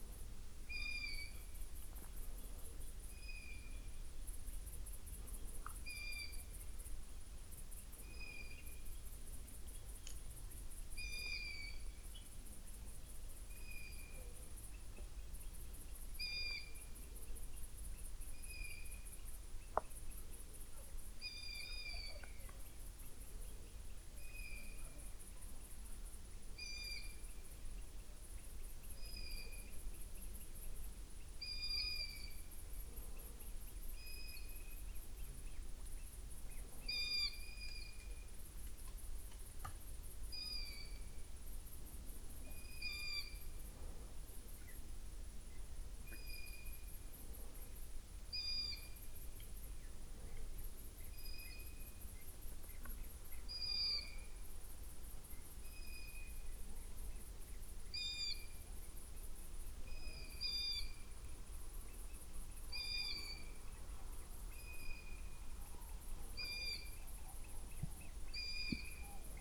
Winkel, Kleinzerlang, Deutschland - night ambience, crickets, owls, a donkey
village Kleinzerlang, night ambience, owl calls (Asio otus), unreal scream of a donkey at 1:20, an unidentified animal strolling in the grass nearby, could be a raccoon or badger... and some digesting sounds of the recordist... noisy recording, slightly surpressed in audacity
(Sony PCM D50, Primo EM172)